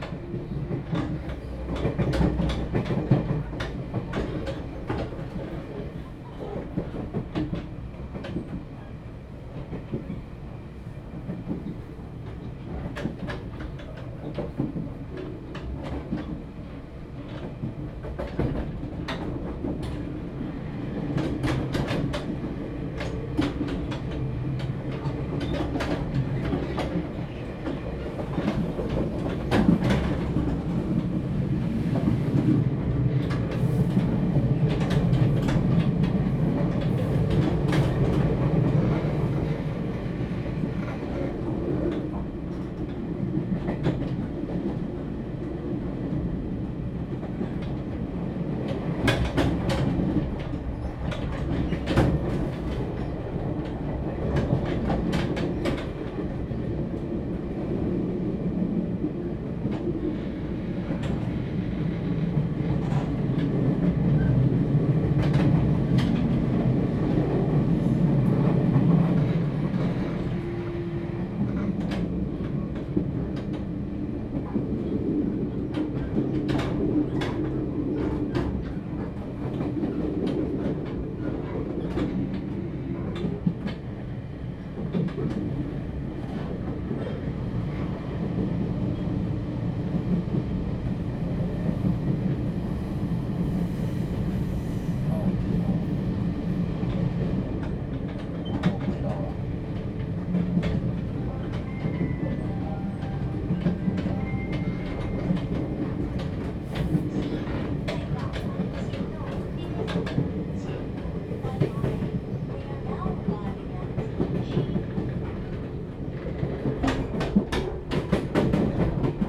Qidu Dist., Keelung City - In the train compartment joint passage
In the train compartment joint passage, Traffic sound
Binaural recordings, Sony PCM D100+ Soundman OKM II